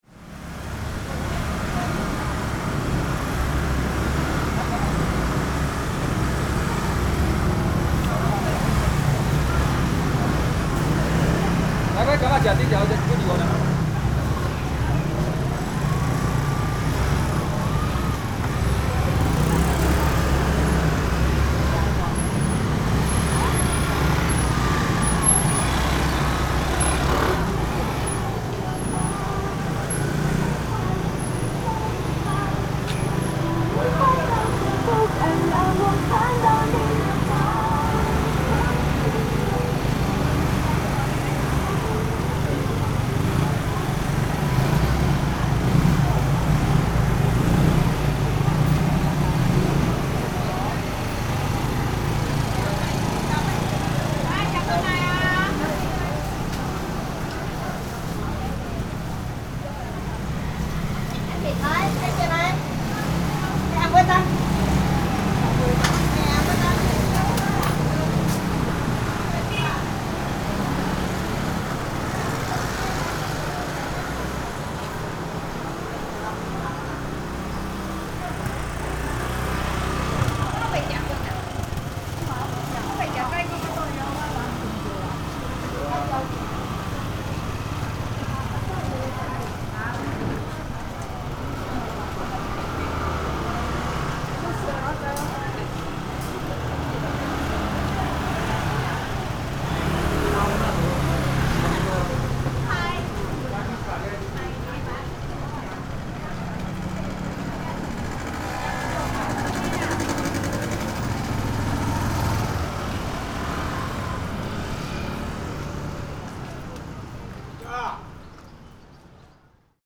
Qingshui St., Tamsui Dist., New Taipei City - Walking through the traditional market
Walking through the traditional market, The traffic sounds
Binaural recordings
Sony PCM D50 + Soundman OKM II
Tamsui District, New Taipei City, Taiwan, April 2012